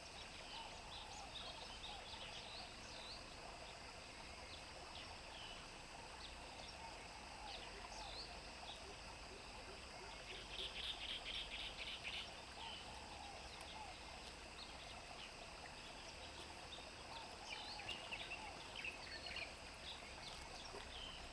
桃米巷, 埔里鎮 Puli Township - Bird calls
Bird calls, Frogs chirping
Zoom H2n MS+XY